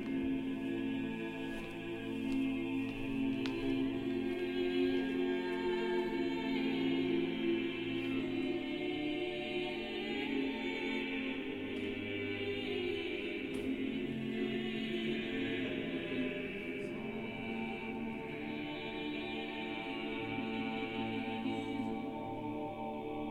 [Zoom H4n Pro] Kyrie Eleison, exhibit about polyphony in the museum.